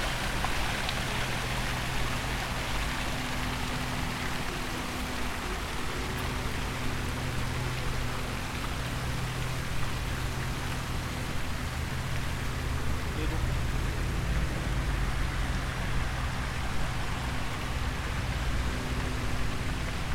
Autour de la fontaine Marocaine du Parc des Thermes.